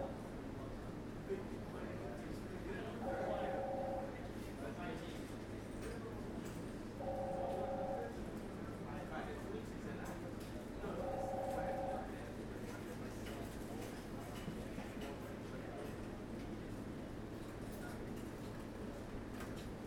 In the cutlery aisle of Zahner's Cash and Carry